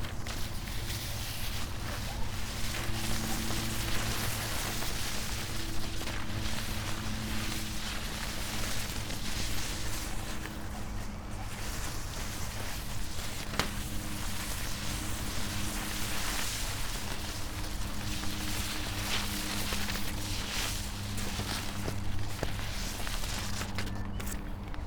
path of seasons, vineyard, piramida - walk through overgrown footpath with unfolded scroll book
long strips of paper over high grass ... and, unfortunately, broken snail